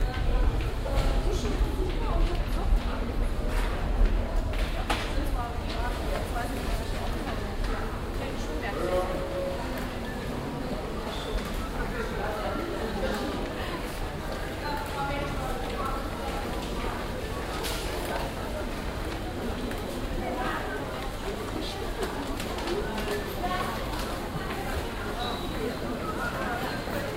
cologne, neumarkt, strrassenunterführung, passage
soundmap: köln/ nrw
rolltreppeneinfahrt und gang durch die neumarkt unterführung, passage nachmittags, rolltreppenauffahrt platz mitte
project: social ambiences/ listen to the people - in & outdoor nearfield recordings
1 June